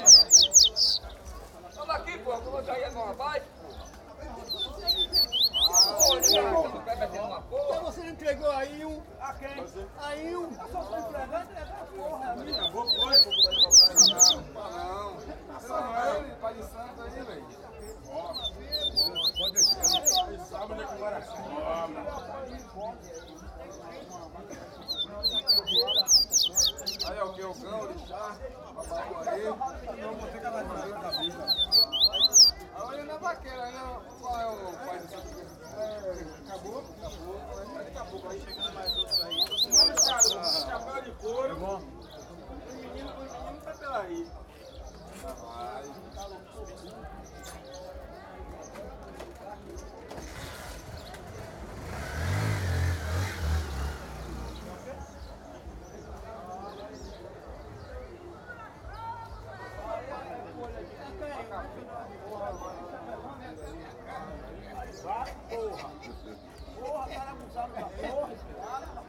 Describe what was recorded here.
Sábado de feira, vende-se e expõe-se papa-capim ao lado da igreja dos remédios. Market place at Saturday, sold and exposed papa-capim next to the Remédios's Church.